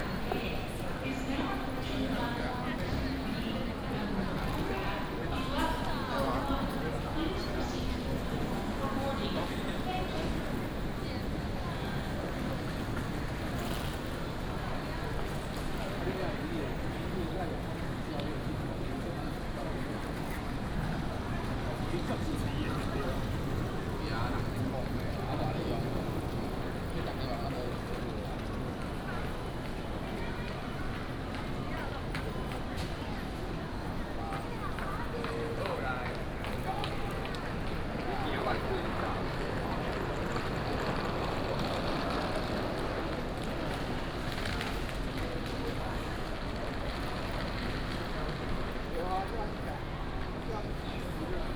THSR Taichung Station, Taiwan - In the station lobby
In the station lobby